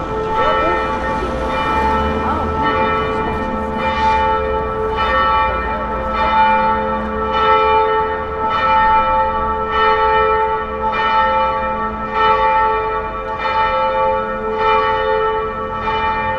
Brussels, Altitude 100, the bells.
PCM D50 internal mics.
Forest, Belgium, March 2009